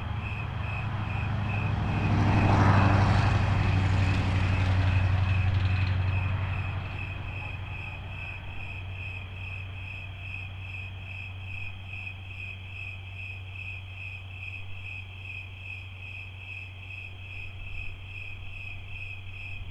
neoscenes: night peepers on the road
CO, USA